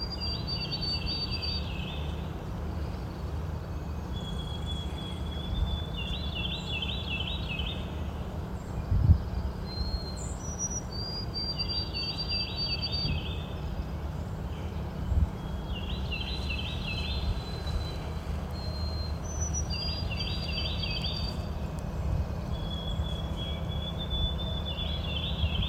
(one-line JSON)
{"title": "Mount Tabor, New Jersey - Pond Life", "date": "2020-04-08 13:36:00", "description": "Audio track from video made with iPhone 11 Pro with Zoom iQ7 mic, at a little pond in Mount Tabor, NJ. The video documents activity at the pond, including two duck decoys, a turtle, a robin, a willow tree, accompanied by vociferous white-throated sparrows and robins. There is also the sound of a ubiquitous New Jersey Transit train passing the Mount Tabor stop.", "latitude": "40.87", "longitude": "-74.47", "altitude": "274", "timezone": "America/New_York"}